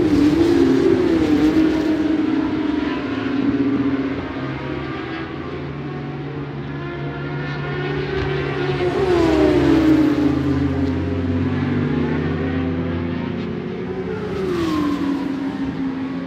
Scratchers Ln, West Kingsdown, Longfield, UK - British Superbikes 2005 ... 600 ...
British Superbikes 2005 ... 600 free practice one ... one point stereo mic to minidisk ...
26 March 2005, 10:30